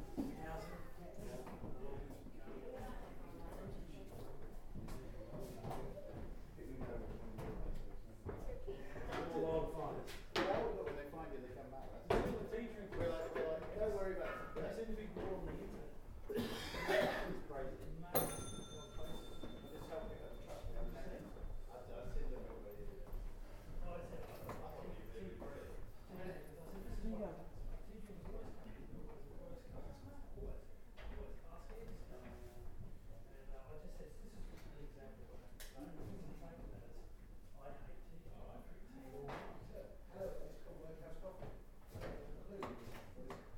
Jacksons of Reading, Reading, UK - Vintage tills before auction
In January 2014, Jacksons of Reading ceased trading and held an auction to sell off all the assets of the family-owned department store. It was a momentous, sad, historic occasion and involved opening the entire building up so that people could see all the items for sale on the evening before the auction. The whole building was opened up in ways it never previously had been, and I was able to record some of the sounds of the old furniture of Jacksons of Reading, such as these old wooden tills with bells inside.